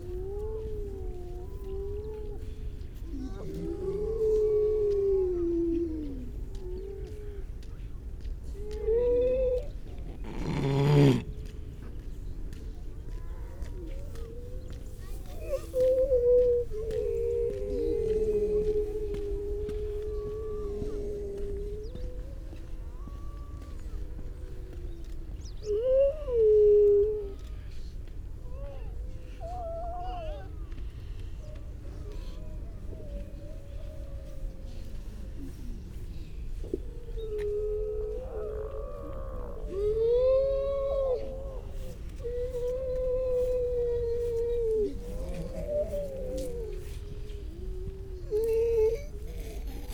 Unnamed Road, Louth, UK - grey seals soundscape ...
grey seal soundscape ... mainly females and pups ... parabolic ... bird calls ... mipit ... curlew ... crow ... skylark ... pied wagtail ... redshank ... starling ... linnet ... all sorts of background noise ...
East Midlands, England, United Kingdom